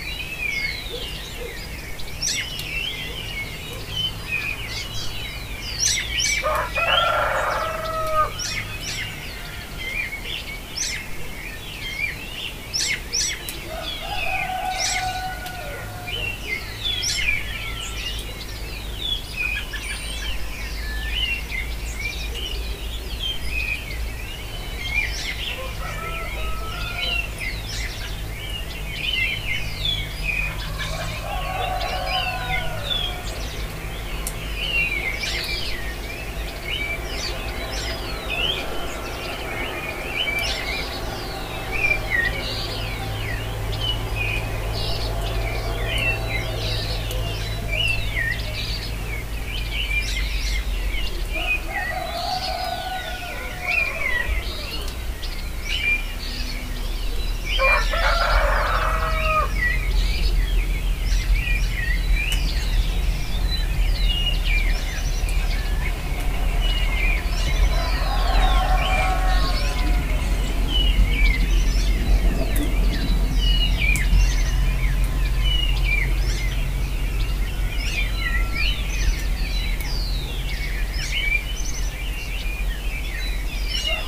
SOnidos de un amanecer en la Calera.
26 May 2013, ~05:00